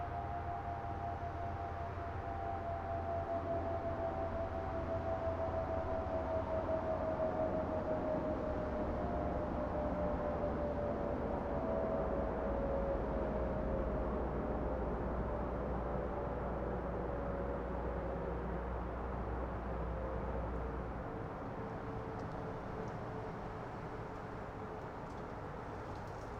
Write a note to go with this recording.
sounds of the highway, dogs, and song